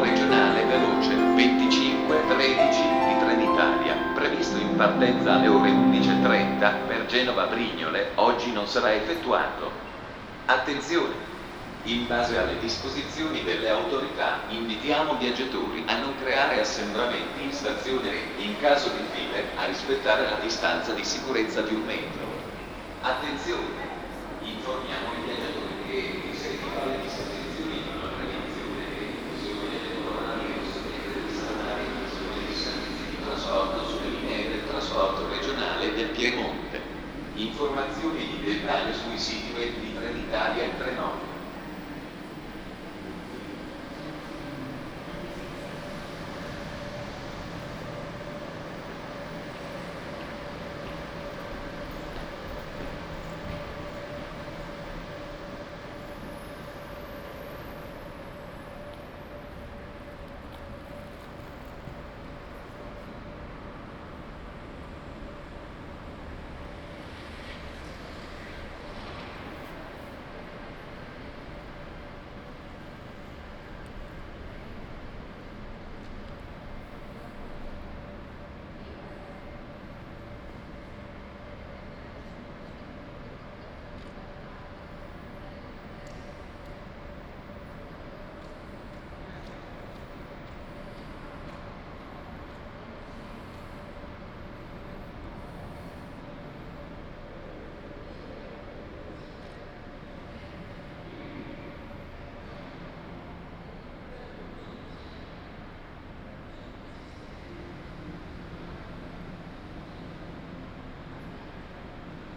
Chapter VII of Ascolto il tuo cuore, città. I listen to your heart, city
Saturday March 14th 2020. Crossing the open-air market of Piazza Madama Cristina, then Porta Nuova train station, Turin, and back. Four days after emergency disposition due to the epidemic of COVID19.
Start at 11:00 p.m. end at 11:44 p.m. duration of recording 43'57''
The entire path is associated with a synchronized GPS track recorded in the (kmz, kml, gpx) files downloadable here:
Piemonte, Italia, 14 March 2020, 11:00